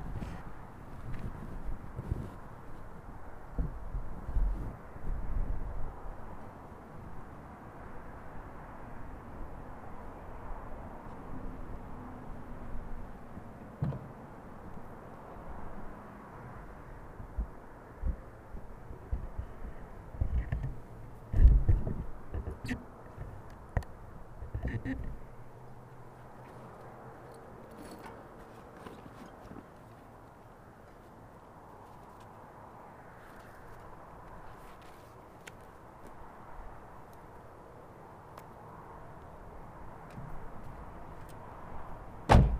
so hot. chinqi listens from yukikos roof. some workers return to homebase and JUST as the FIRE MONKEY hour draws to a close and the FIRE BIRD hour begins eka emerges from the office and we are done here!
28 June, 16:52